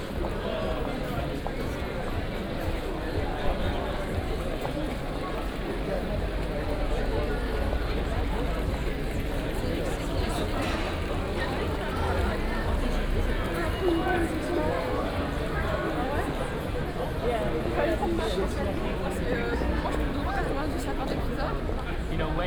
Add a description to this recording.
weekend ambience at Place des Augustins, mild temperture, many people are on the street, short walk around the place, (PCM D50, OKM2)